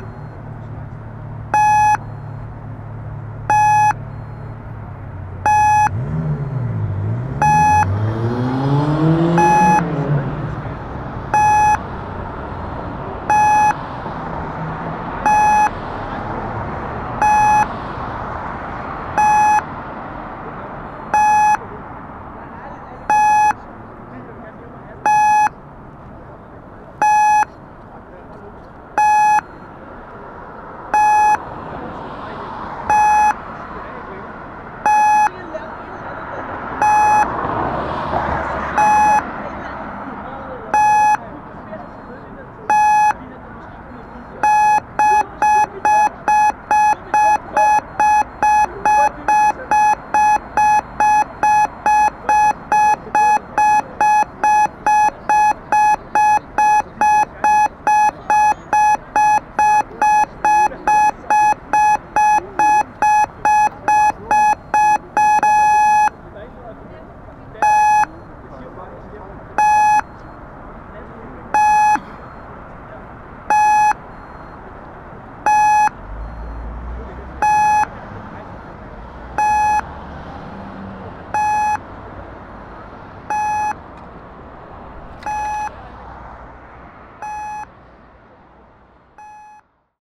København, Denmark - Red light
Near a big road, a red light indicates to pedestrians they can cross. It's a typical sound of Copenhagen.
14 April 2019, 19:00